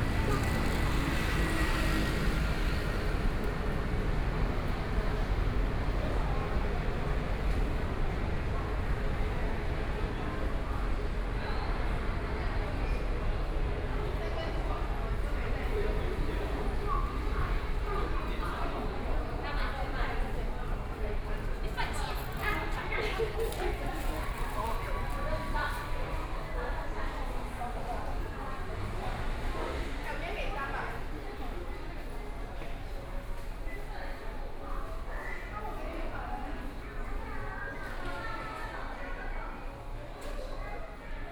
Central Park Station, Kaoshiung City - Walking through the station
Walking through the station